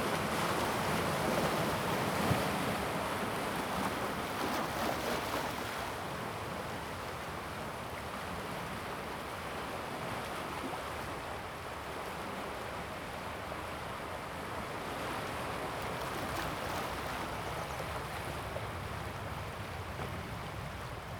大屯溪, Tamsui Dist., New Taipei City - Stream to the sea
On the coast, Sound of the waves, Stream to the sea
Zoom H2n MS+XY
New Taipei City, Tamsui District, 21 November 2016